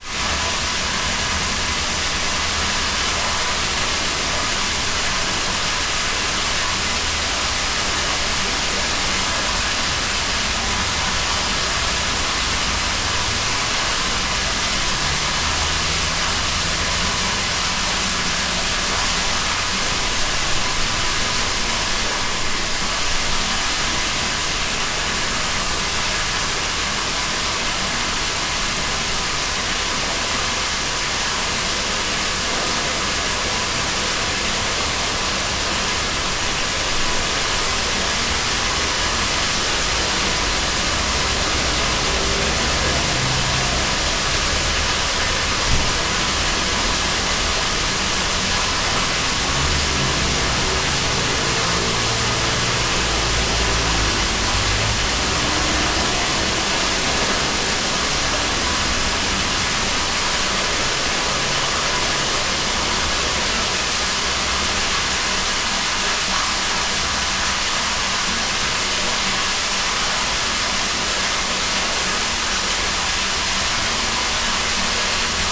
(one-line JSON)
{"title": "Binckhorstlaan, Den Haag - White Box", "date": "2012-02-06 18:25:00", "description": "A strange white box with a ventilation opening on both sides. Water seemed to be running inside.\nRecorded using a Senheiser ME66, Edirol R-44 and Rycote suspension & windshield kit.", "latitude": "52.06", "longitude": "4.34", "altitude": "2", "timezone": "Europe/Amsterdam"}